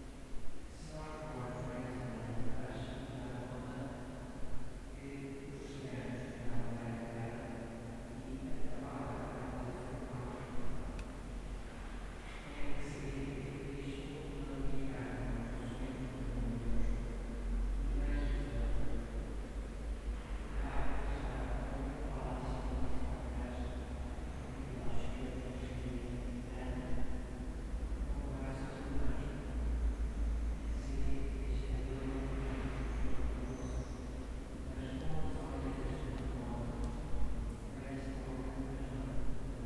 Sé, Guarda Municipality, Portugal - Sé da Guarda
Sé da Guarda (cathedral), resonant space, people talking and walking, stereo, zoom h4n